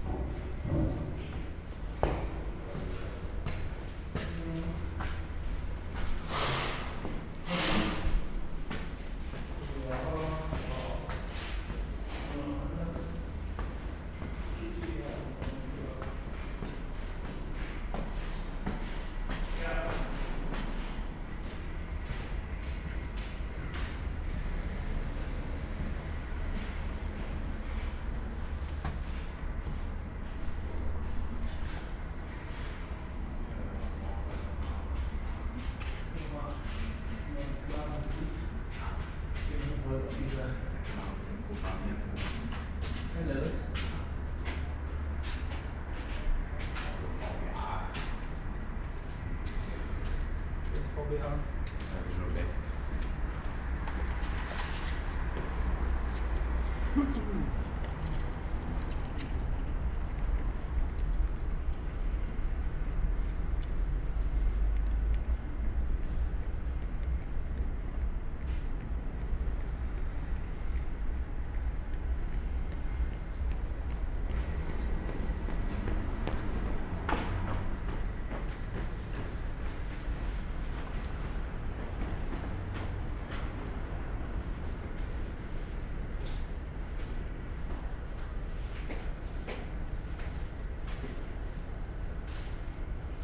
Südbrücke railway bridge, Köln Poll - stairway ambience, passers-by
Köln Südbrück railway bridge, stairway ambience, joggers, bikers and passers-by
(Sony PCM D50, DPA4060)
Cologne, Germany, 13 August 2013